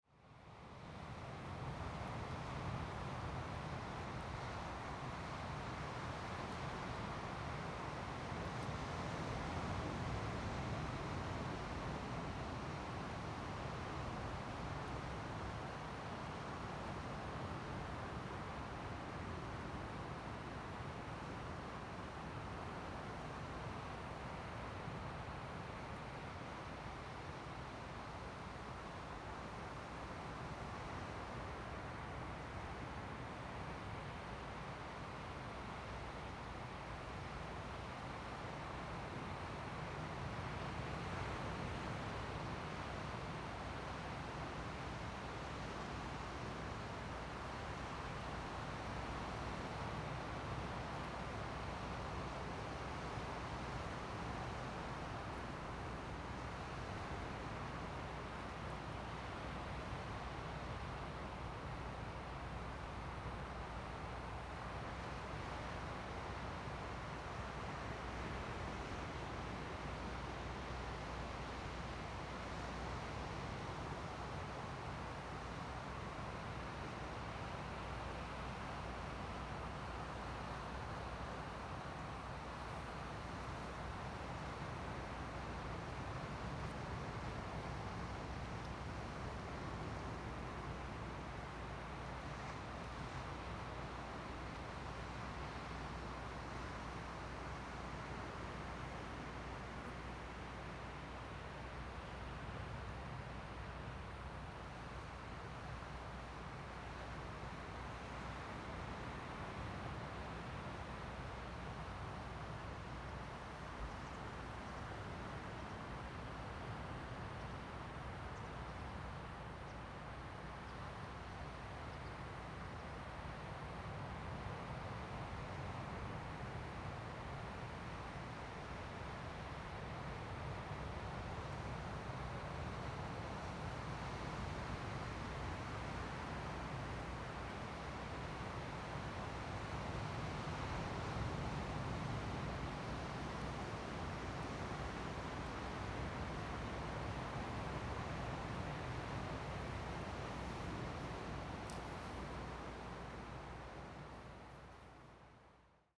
公舘村, Lüdao Township - On the coast
On the coast, Sound of the waves
Zoom H2n MS+XY
Taitung County, Taiwan, 31 October, 07:49